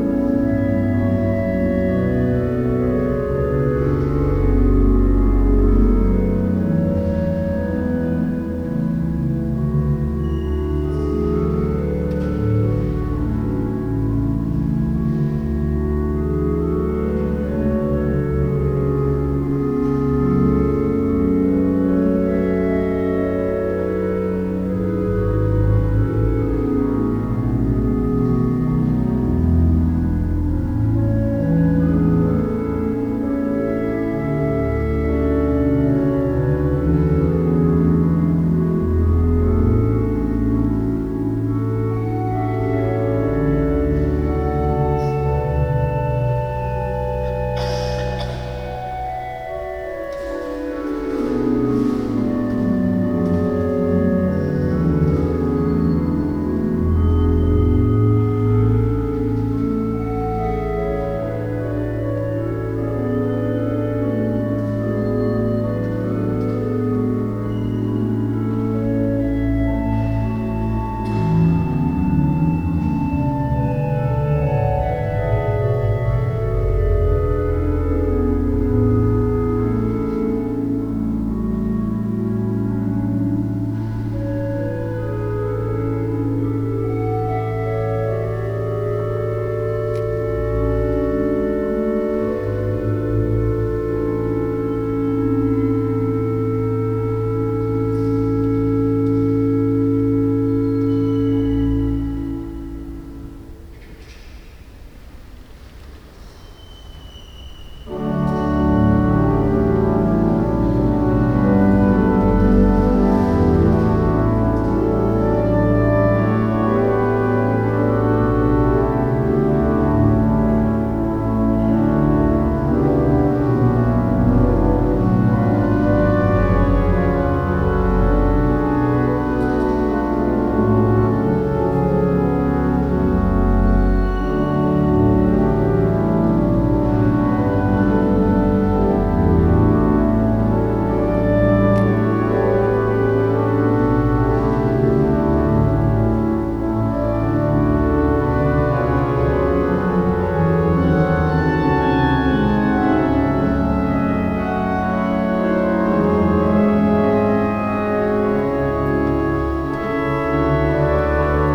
Organ concert Marienkirche - 6/7 Organ concert Marienkirche
06 Felix Bartholdy Mendelssohn_ Andante Tranquillo A-Dur & Andante D-Dur